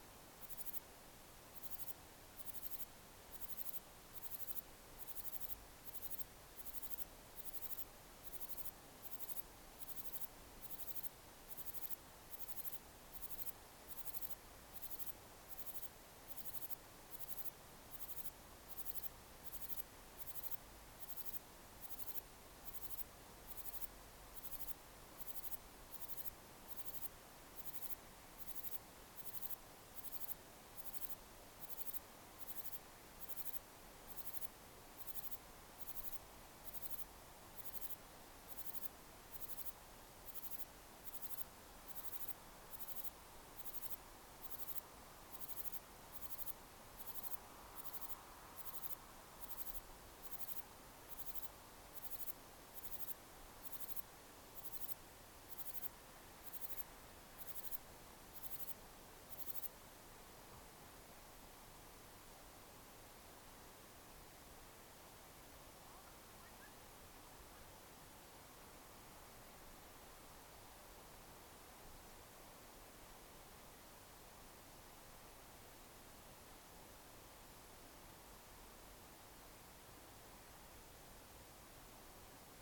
Sitting on the back fence, Lobster Farmhouse, Portland, Dorset, UK - Listening to the crickets
Returning to my B&B after dark, I noticed many crickets in the hedges around. It sounded amazing, but all of a sudden people were driving on the driveway where I heard the crickets, and I was self conscious about trying to record them. I did not want to draw attention to myself, but as I rounded the corner of where I was staying, I realised that a single cricket was making its wondrous music behind the hedge. I positioned the recorder close to its place and sat back a little distance away to listen acoustically to the sound and to the distant surf of the sea. To dogs barking, someone squeaking home on their bicycle. The white noise of traffic on the road. The evening stillness. Then happiest of happy times, a small and industrious hedgehog came bowling down the path, all business and bustle. I really do love a hedgehog.
23 July 2015, ~22:00